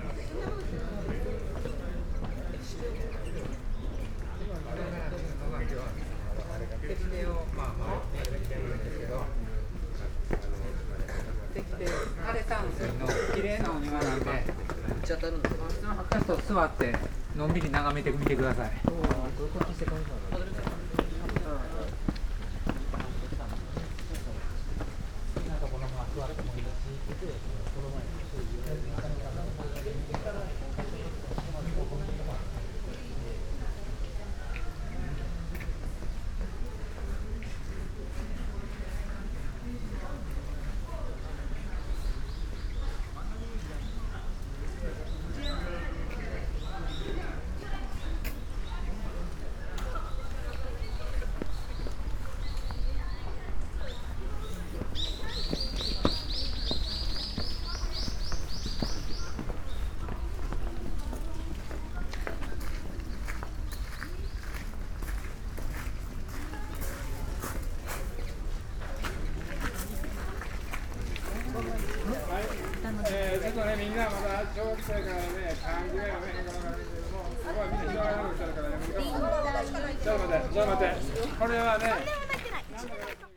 entrance, Royanji garden, Kyoto - soft rain of trees seeds
gardens sonority
stairs, steps, gravel path, people talking, trees, birds